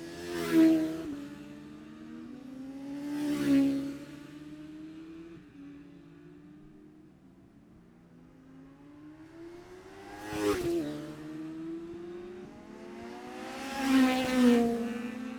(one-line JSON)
{"title": "Jacksons Ln, Scarborough, UK - Gold Cup 2020 ...", "date": "2020-09-11 11:07:00", "description": "Gold Cup 2020 ... Classic Superbike practice ... Memorial Out ... dpa 4060s to Zoom H5 clipped to bag ...", "latitude": "54.27", "longitude": "-0.41", "altitude": "144", "timezone": "Europe/London"}